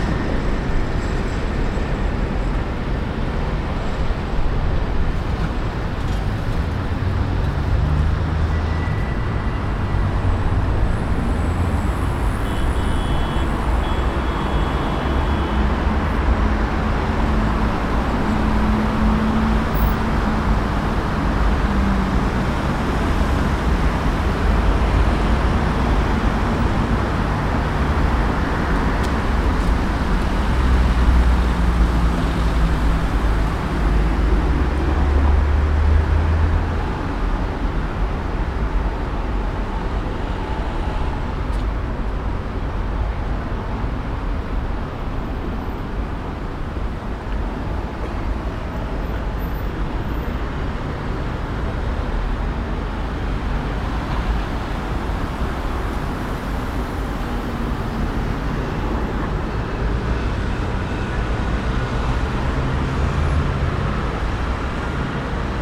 Antwerpen, Belgium - Late afternoon traffic
Traffic on the Frankrijklei; everyone heading home after work.